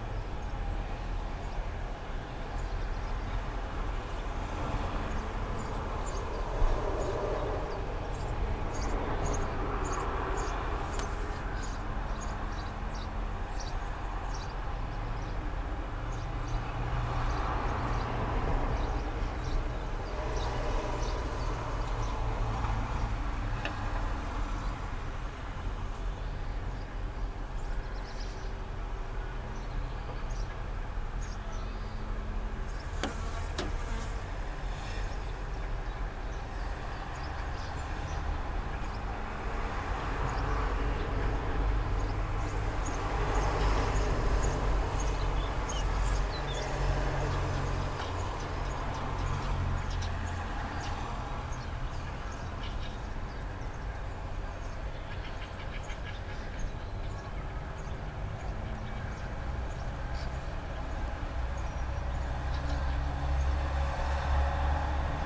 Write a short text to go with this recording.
listening to the city through open window of residency house